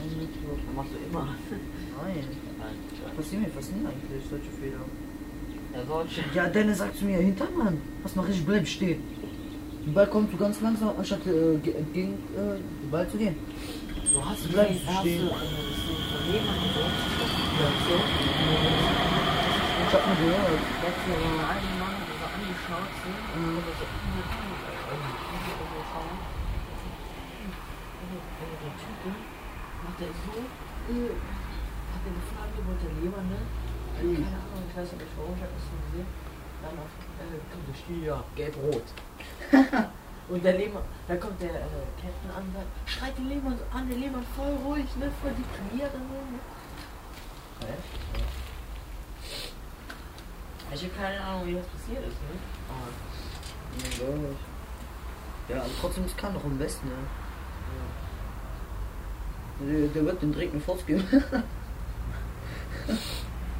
project: social ambiences/ listen to the people - in & outdoor nearfield recordings